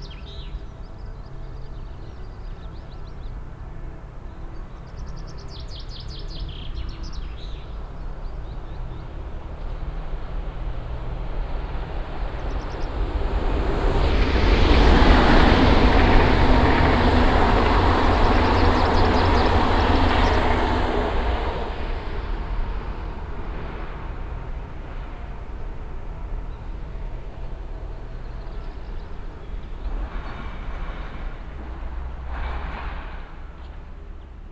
unna, breitenbach areal, at the railway tracks
eight o'clock in the morning of a sunny spring day, the church bells of the town in the distance - trains passing by - announcements of the near station in the distance
soundmap nrw - social ambiences and topographic field recordings